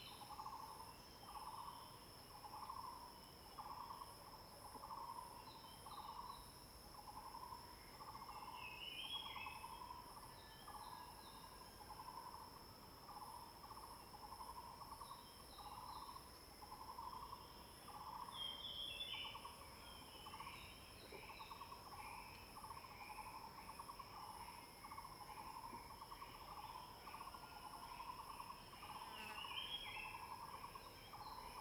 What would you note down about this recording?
Birds singing, Bird sounds, Zoom H2n MS+ XY